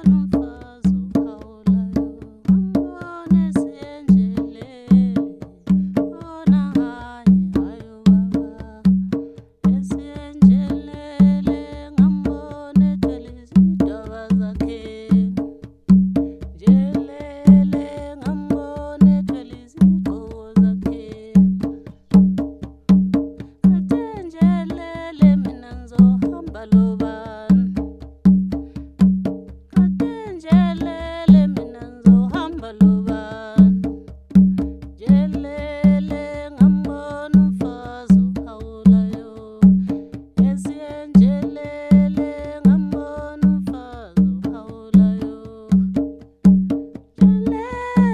we are at CoCont in the bushland not far from the road and to Lupane centre...before we begin with our interview recording, Ugogo and her girl apprentice perform a traditional Ndebele rain-making song...